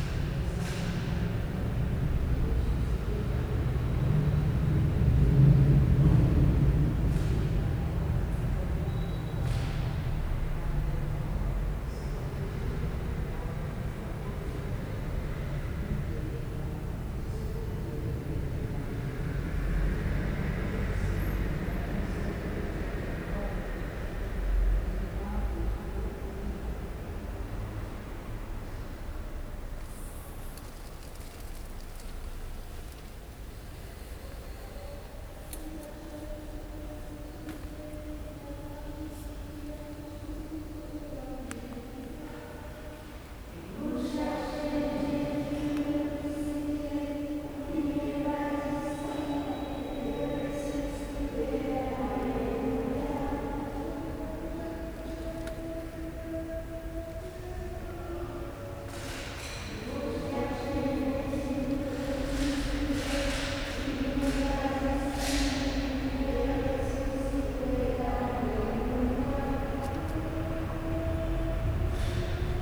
Rue Pouchet, Paris, France - Des messes de semaine
Morning mass at the Catholic Church of Saint-Joseph des Épinettes taking place in the 'Oratorie' at the back of the church. Recorded using the on-board microphones of a Tascam DR40 towards the back of the nave.